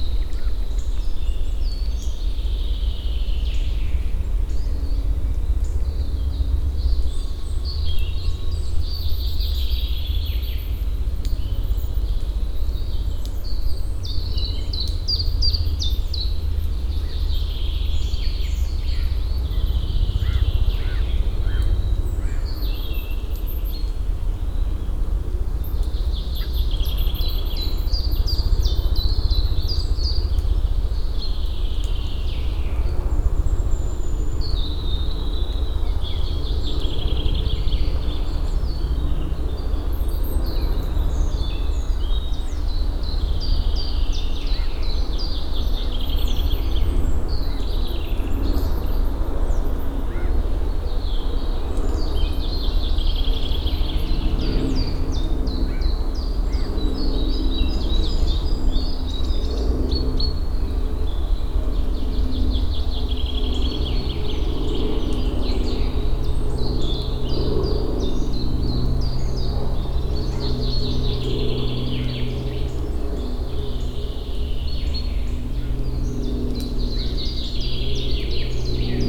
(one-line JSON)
{"title": "Morasko Nature Reserve, eastern border - wildboar kingdom", "date": "2015-04-11 12:38:00", "description": "(bianarual) forest activity at the border of the Morasko Nature Reserve. all treas crackling as if there are releasing pockets of air. inevitable roar of various planes.", "latitude": "52.48", "longitude": "16.90", "altitude": "138", "timezone": "Europe/Warsaw"}